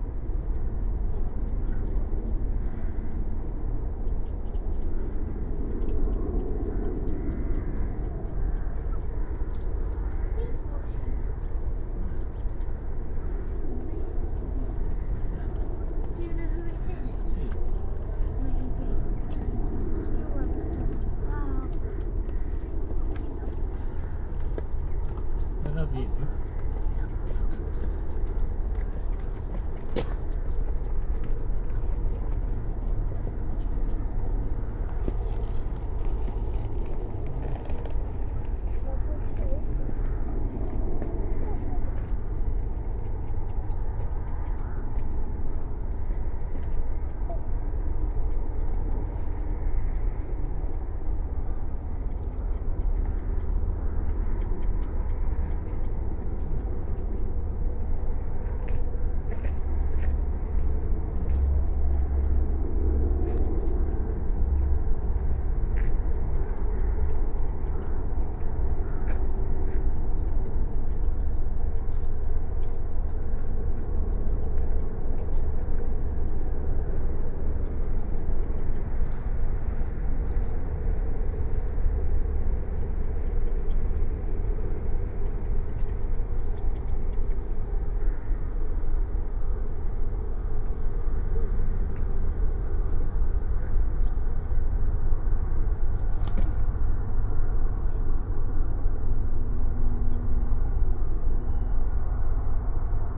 Behoes Ln, Reading, UK - Behoes Lane Meditation
Meditation on Behoes Lane in Woodcote looking out over the Thames and Moulsford with Didcot power station in the distance. The shifting drone of a lawn mower is prominent throughout most of the recording punctuated in the foreground with birds and the scurrying of two rats that were intrigued by my presence. Recorded on a Sound Devices 788T with a pair of Sennheiser 8020s either side of a Jecklin Disk.